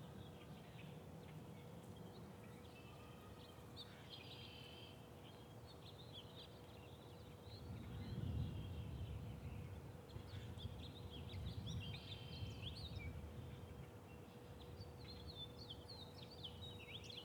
Waters Edge - Background Sounds
Sounds of the backyard on a spring day